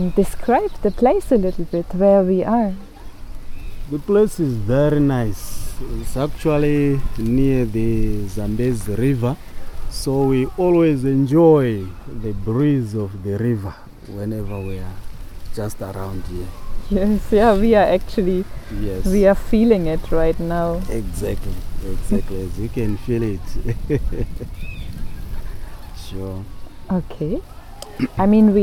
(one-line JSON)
{"title": "Sebungwe Primary School, Binga, Zimbabwe - People in this area depend on fish...", "date": "2016-05-24 10:40:00", "description": "Mr Munenge, the headmaster of Sebungwe River Mouth Primary School describes the area of Simatelele Ward where the school is located and some of the local characteristics and challenges.", "latitude": "-17.75", "longitude": "27.23", "altitude": "502", "timezone": "Africa/Harare"}